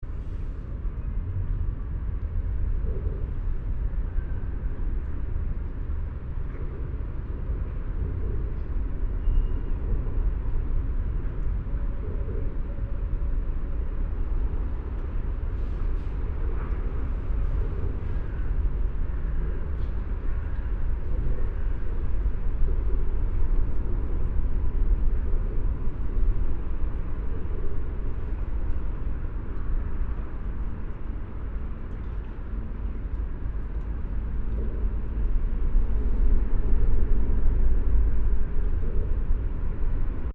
Binckhorst Uranusstraat
parabolic mic under bridge
The Hague, The Netherlands